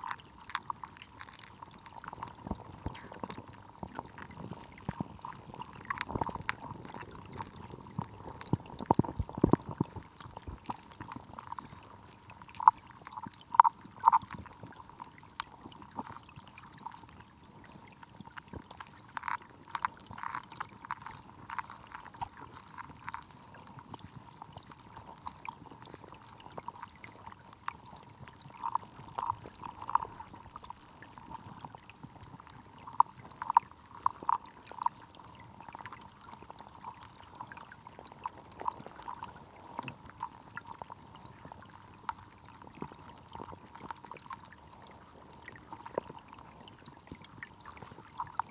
Hydrophone in the "father" of Lithuanian rivers - river Nemunas.
Kauno apskritis, Lietuva, 19 June 2022, ~20:00